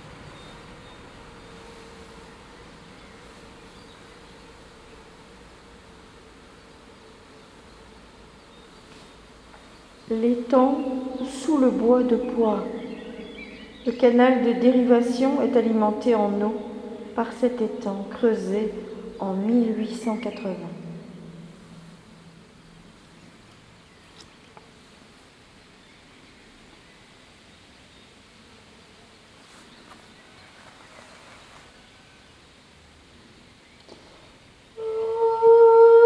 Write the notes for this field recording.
Reading an info leaflet about the hydroelectric power plant, voice impro by Alice Just. Birds, train passing above. Tech Note : SP-TFB-2 binaural microphones → Olympus LS5, listen with headphones.